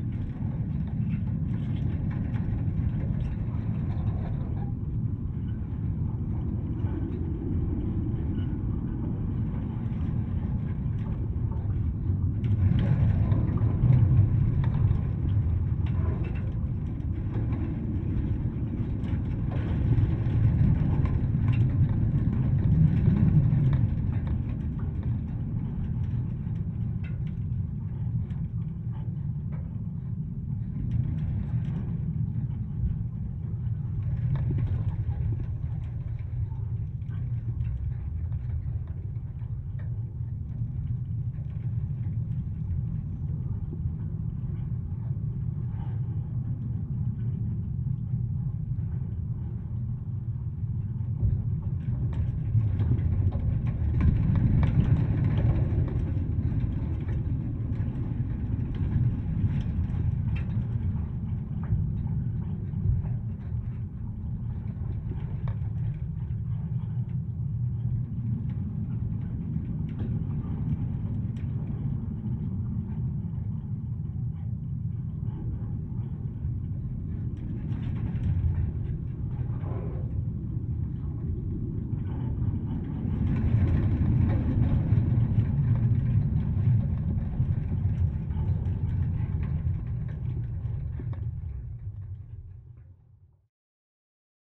Lithuania, soviet cars museum/ fence
soviet cars museum near Moletai, Lithuania. the atmosphere...hm, the sound of fence through contact mics shows the atmosphere best of all